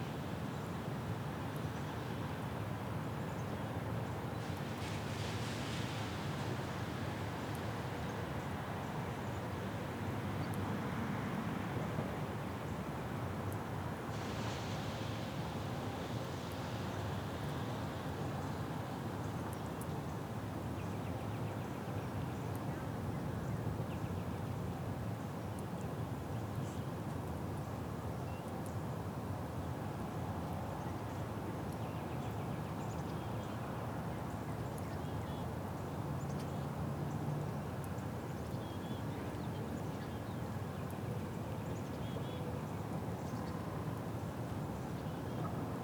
Matoska Park - Matoska Park Part 2
The sound of a warm March day at Matoska Park in White Bear Lake, MN